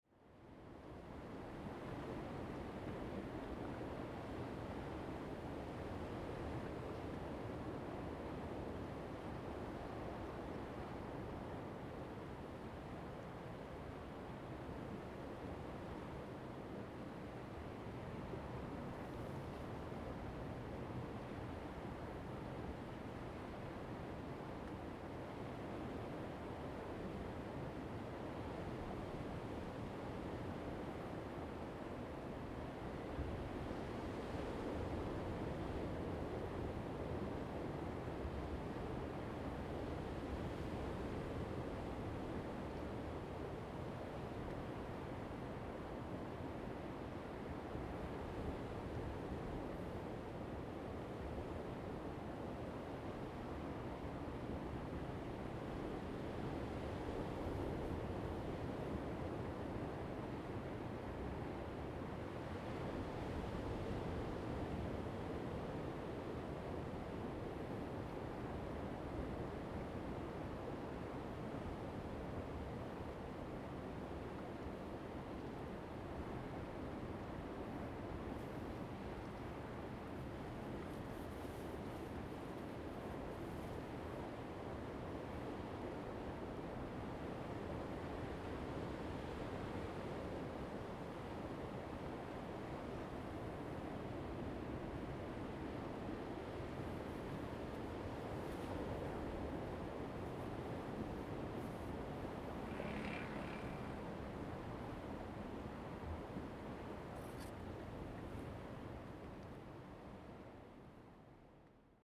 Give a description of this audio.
In the dock, sound of the waves, Zoom H2n MS +XY